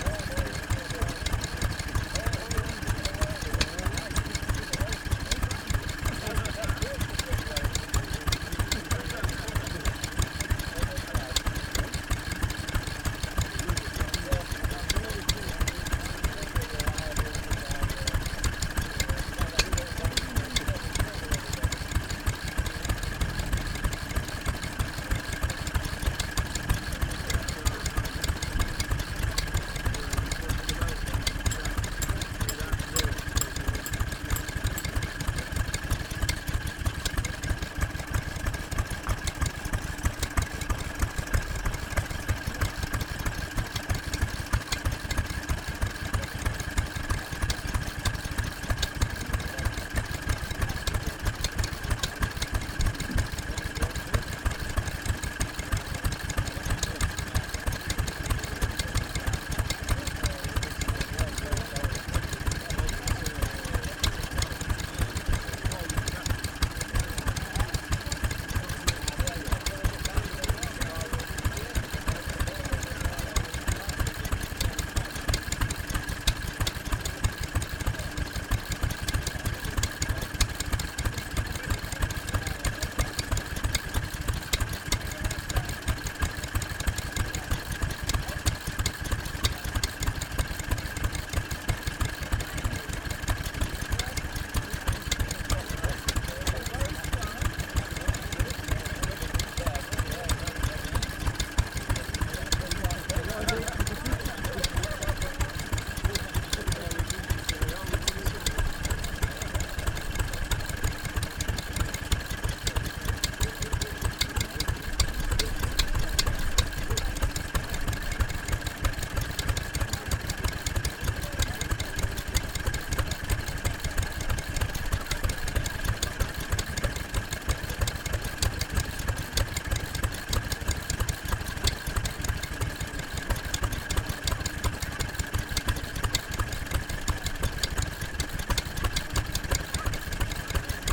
Red Way, York, UK - Farndale Show ... Wolseley W1 standing engine ...
Farndale Show ... Wolseley W1 standing single cylinder engine ... chugging along ... then part way through a twin cylinder milking machine is started up ... lavalier mics clipped to baseball cap ...